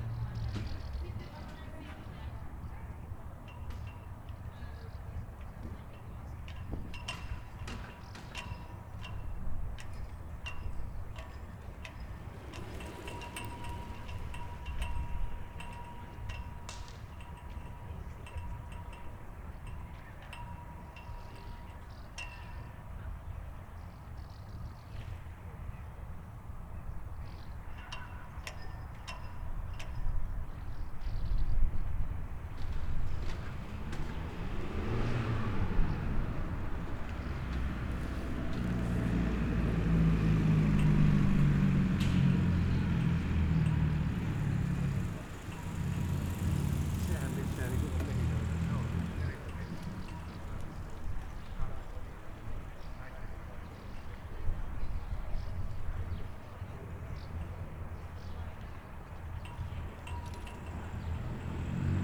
Oulu City Theatre, Oulu, Finland - Friday evening in front of the City Theatre
Recorded between the City Theatre and library on a warm summer friday. Lots of people moving towards and from the city. Loud cars and motorbikes going to the parking lot of the library to hang out. Zoom H5 with default X/Y capsule.
Manner-Suomi, Suomi, 12 June 2020, ~20:00